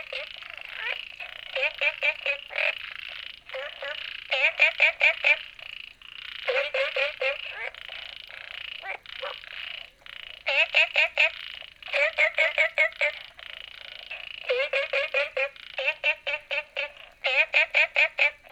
綠屋民宿, Puli Township - ecological pool
Small ecological pool, All kinds of frogs chirping